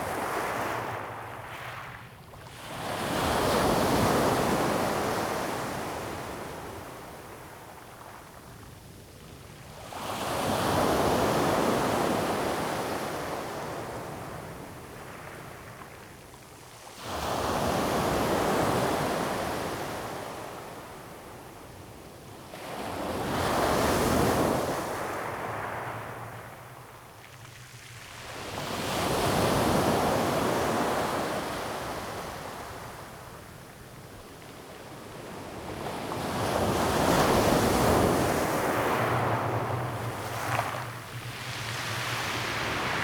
豐原里, Taitung City - Waves
Waves, Very hot weather
Zoom H2n MS+XY
Taitung City, 東51鄉道